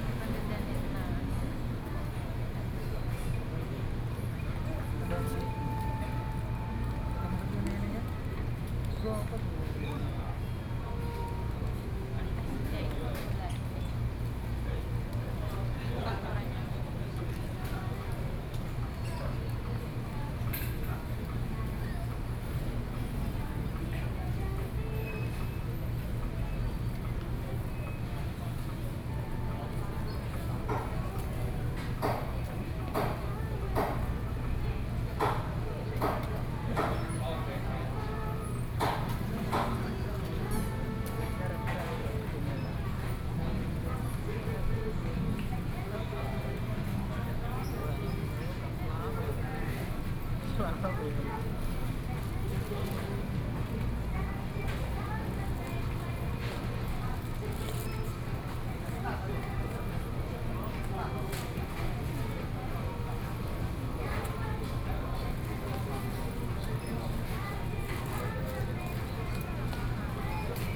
Zhongli Station, Taoyuan County - Station hall
in the Station hall, Zoom H4n+ Soundman OKM II